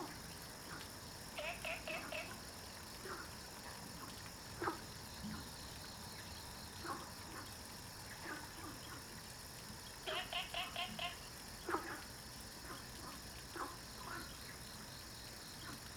TaoMi River, 桃米生態村 Nantou County - Frogs chirping
Frogs chirping
Zoom H2n MS+XY